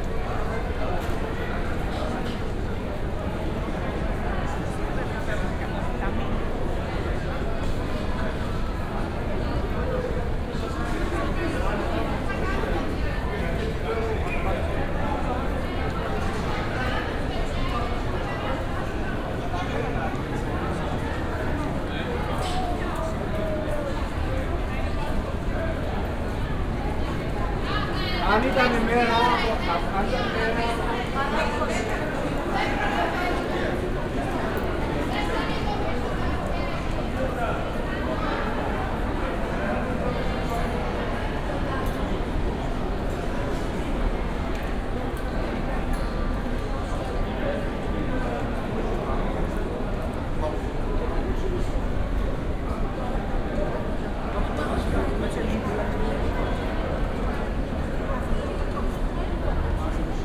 Athens, Syntagma Square - entrance to metro platforms on Syntagma Square
binaural. late afternoon. lots of people on the station going towards and from the platforms. (sony d50 + luhd PM01bins)
Athina, Greece, 6 November, ~18:00